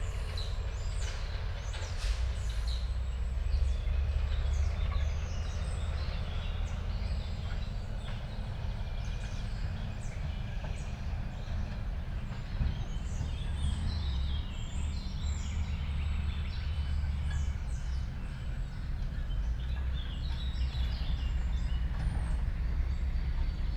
park Pszczelnik, Siemianowice Śląskie - park ambience /w levelling works
lorries going back and forth, dumping rubble and levelling it, near park Pszczelnik, Siemianowice Śląskie
(Sony PCM D50, DPA4060)
Siemianowice Śląskie, Poland, 21 May 2019